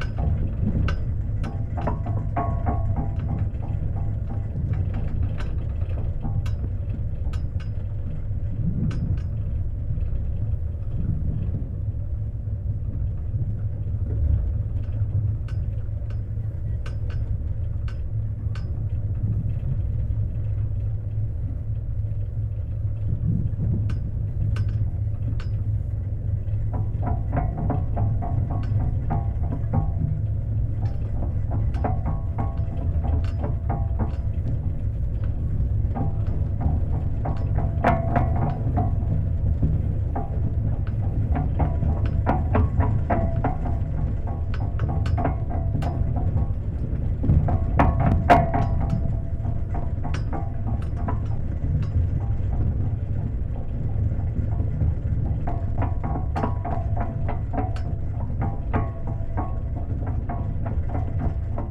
Upper Bay - The Inner Ferry
Contact mic recording (Cortado MkII ).
Sounds of Staten Island Ferry's engine, some wind and metal sounds.